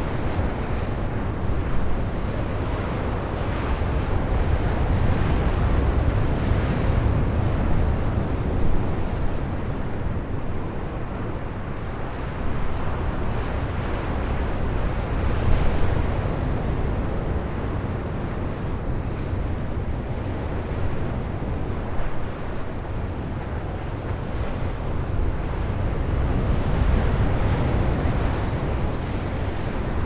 Ploumanach, Lighthouse - Heavy waves crushing into rocks
La mer est souvent agité au phare de Ploumanac'h. Les vagues sont assourdissantes.
At the Ploumanach lighthouse pretty wild waves crush into the rocks.
Getting closer is dangerous.
/Oktava mk012 ORTF & SD mixpre & Zoom h4n